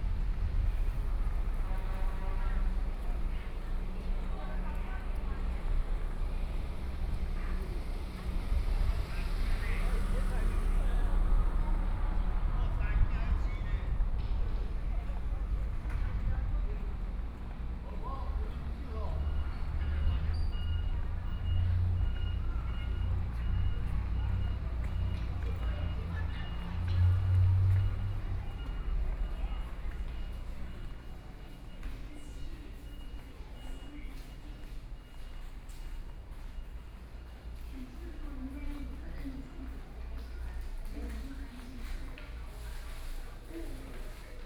Walking in the temple, Traffic Sound, Small towns
7 September 2014, ~11:00